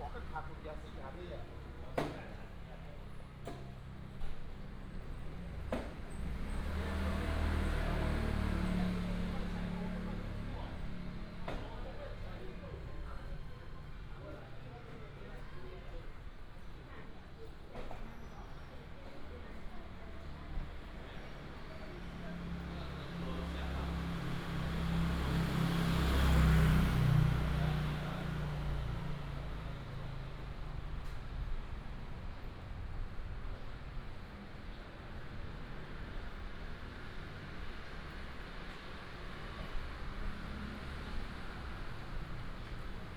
Ln., Guangming St., Yuanlin City - Walking in a small alley
Walking in a small alley